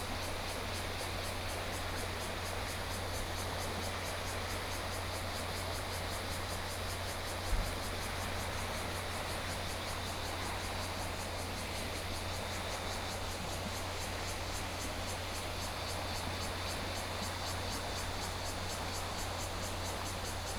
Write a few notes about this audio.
The sound of water, Cicadas sound, The weather is very hot